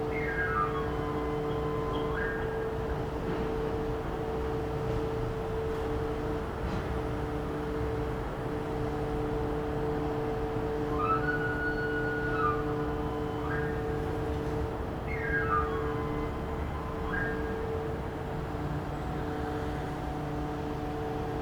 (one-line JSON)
{"title": "Knife Sharpener on Barcelona", "date": "2011-01-17 11:20:00", "description": "An old profession that has a very particular way of advertising their service using a whistle.", "latitude": "41.39", "longitude": "2.14", "altitude": "83", "timezone": "Europe/Madrid"}